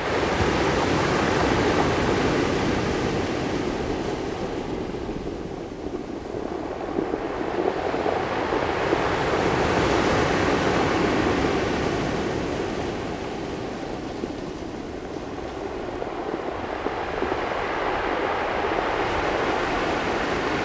花蓮市民有里, Hualien County - Waves
Waves sound
Zoom H2n MS+XY +Spatial Audio
Hualien City, 花蓮北濱外環道, 14 December 2016